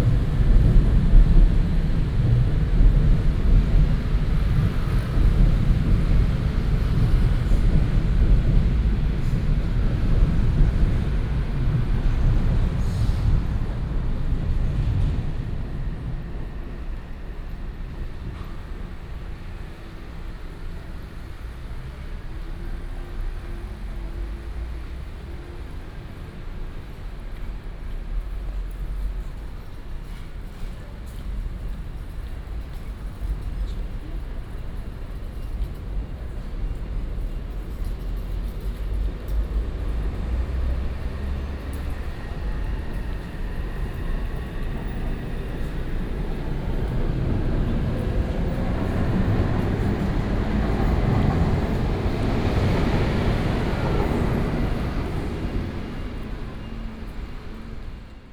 Sec., Beitou Rd., Beitou Dist. - Along the track below the walk
Along the track below the walk, traffic sound
Beitou District, Taipei City, Taiwan, 16 January 2017